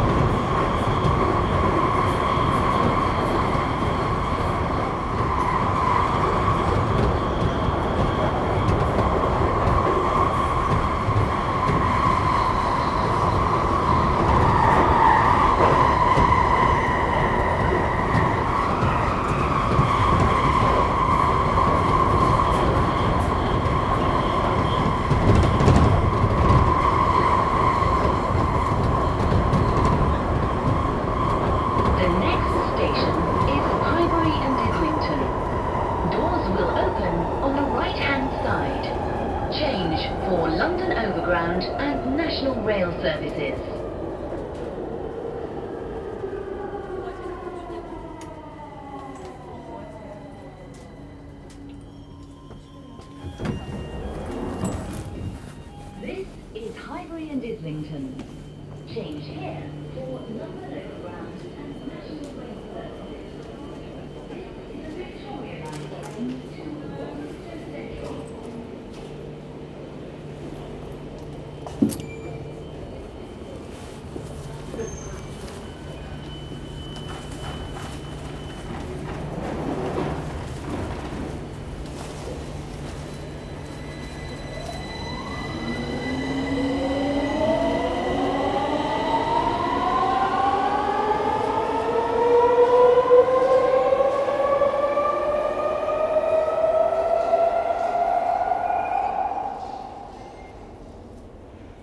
Underground on a Victoria Line tube train, London, UK - Schreech and speed; tube from KingsX to Highbury
Sitting on the tube and contemplating the sounds. This is one of the most noisy stretches in London.
8 February 2018